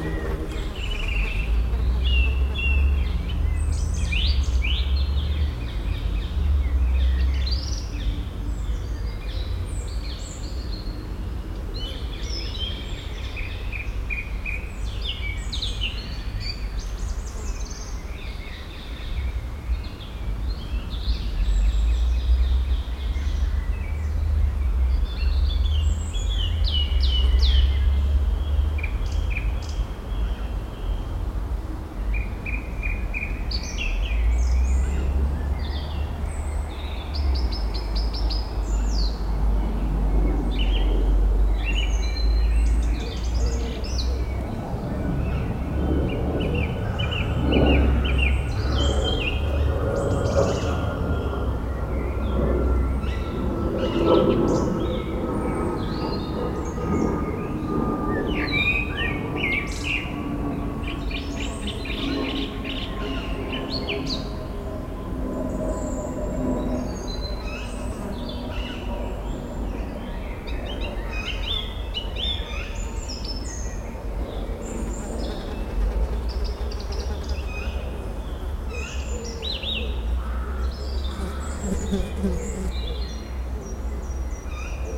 Brussels, Parc Duden, a dead bird near the water.
En promenade au Parc Duden à Bruxelles, jai vu un trou deau et une charogne pourrissante, un oiseau vraisemblablement.
Forest, Belgium, 16 June 2008